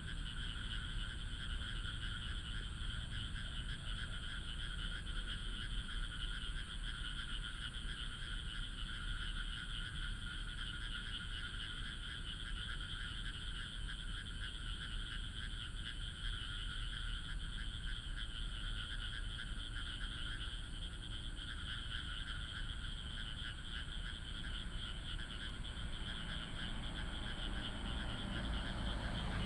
霄裡路160巷, Bade Dist., Taoyuan City - Night in the rice fields
Night in the rice fields, traffic sound, The frog sound, The plane flew through